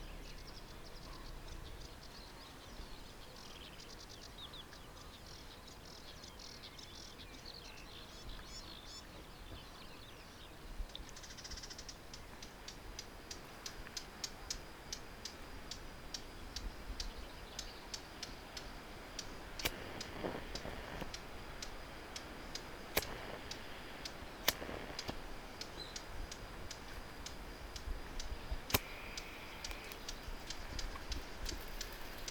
Sunny afternoon over the river Bug, fishing, birds, generally the sounds of nature and fat man trying to go through the mud...
Poland, 10 May 2018